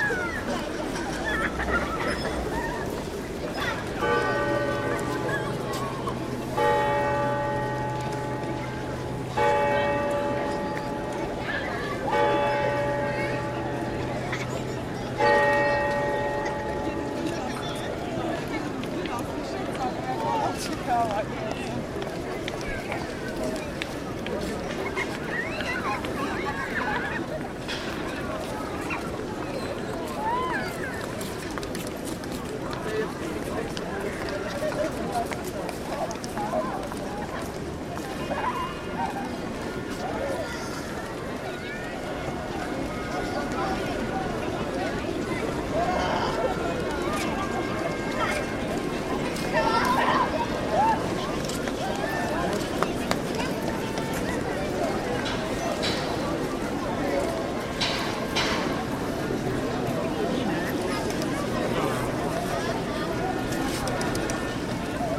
dzielnica I Stare Miasto, Cracovie, Pologne - RYNECK trompette
Crowdy holliday afternoon on the historical square of Krakov. At six p.m, everyday, a trompet player blows 4 times (once towards each cardinal direction) from the top of the cathedral’s tower. If the one toward the square can be clearly heard, the three others get more or less lost in the crowd’s rumor, but yet not completely.